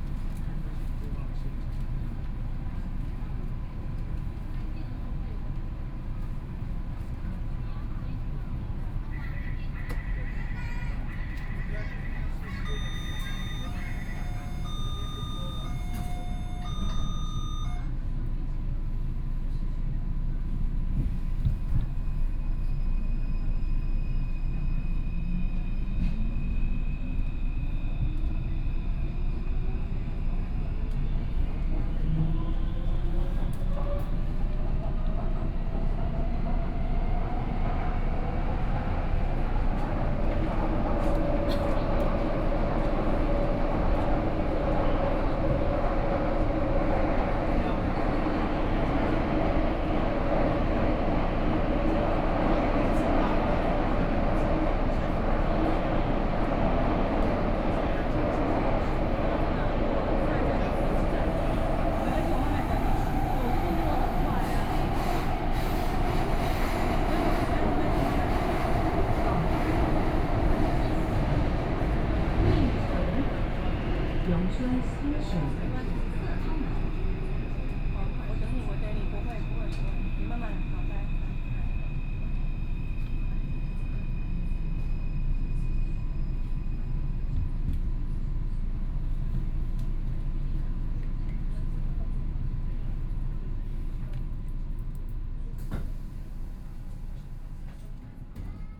from Nangang Exhibition Center station to Yongchun, Binaural recordings, Sony PCM D50 + Soundman OKM II
Nangang District, Taipei City, Taiwan, 31 October